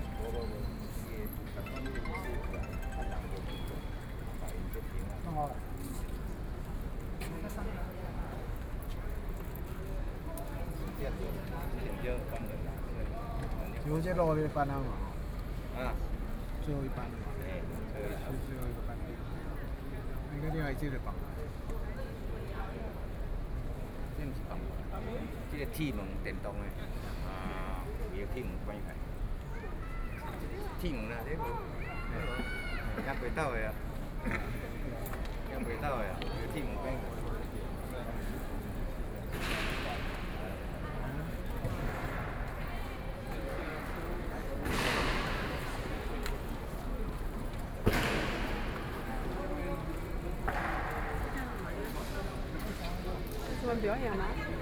Guard ceremony, Tourists, Sony PCM D50+ Soundman OKM II
台北市 (Taipei City), 中華民國, 26 May 2013, 17:07